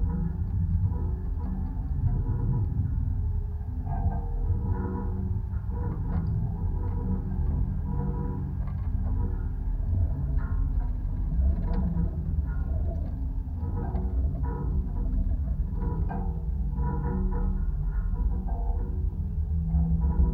Vyžuonos, Lithuania, study of high voltage pole
contact microphones on high voltage pole's support wire and earthing wire. day is quite windy so there are a lot of sounds.
1 November 2019, 15:15